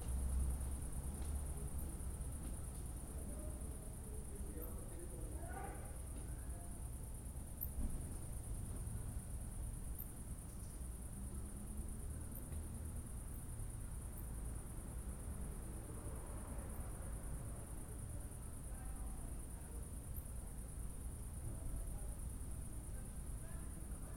Aškerčeva ulica, Cankarjeva ulica, Maribor, Slovenia - corners for one minute
one minute for this corner: Aškerčeva ulica and Cankarjeva ulica